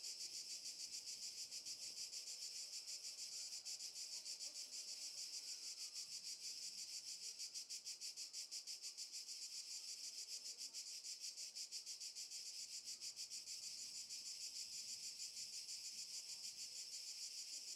Rab, Croatia - Rab Park
Sony PCM-D50 wide